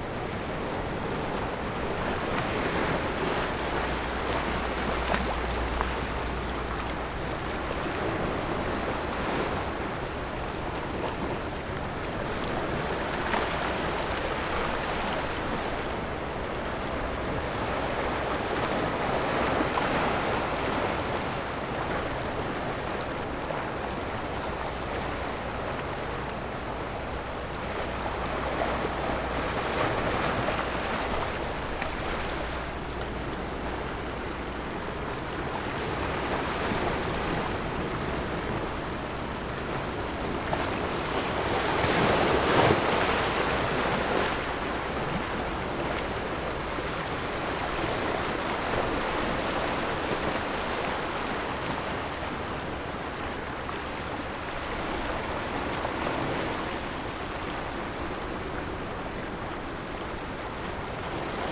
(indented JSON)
{
  "title": "萊萊地質區, Gongliao District - waves",
  "date": "2014-07-29 18:19:00",
  "description": "Rocks and waves\nZoom H6 MS+ Rode NT4",
  "latitude": "25.00",
  "longitude": "121.99",
  "timezone": "Asia/Taipei"
}